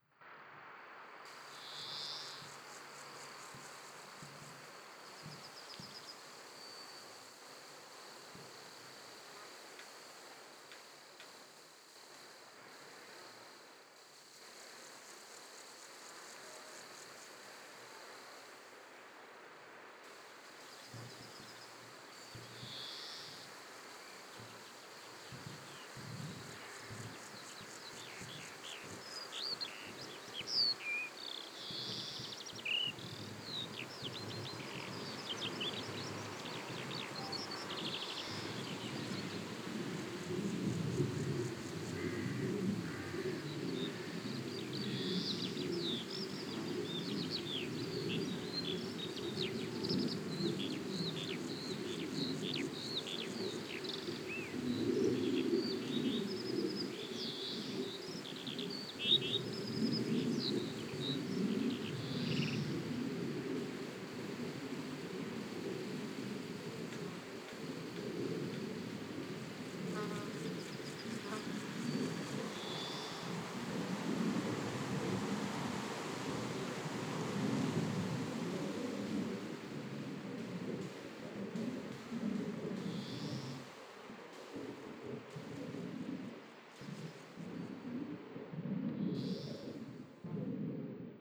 Aufgenommen an einem frühen, leicht windigem, sonnigem Sommerabend.
Eine Gruppe von quirligen kleinen Vögeln fliegt spielerisch und piepsend über das Kornfeld während der Klang eines hoch fliegenden Flugzeuges die Landschaft durchzieht.Der Wind bewegt leicht die Blätter der nahe liegenden Bäume und die Getreideköpfe. Die Klänge der Grillen kommen und gehen mit den Windbewegungen.
Recorded at an early mild windy, but sunny summer evening. Some vivid small birds playful fly across the field chirping, while the sound of a high flying plane crosses the landscape. The wind softly moves the leaves of some nearby trees and the ears of the wheat. The sounds of the crickets come and go with the wind waves.
4 August 2012, 18:30